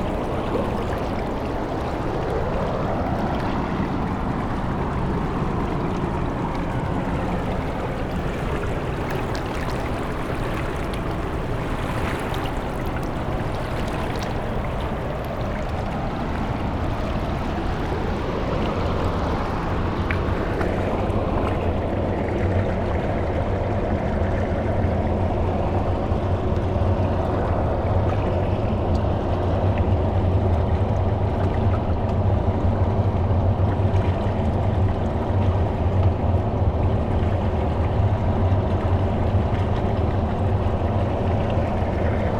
at the edge, mariborski otok, river drava - crushed water flow, rocks
near by dam heavily disturbs waters of river drava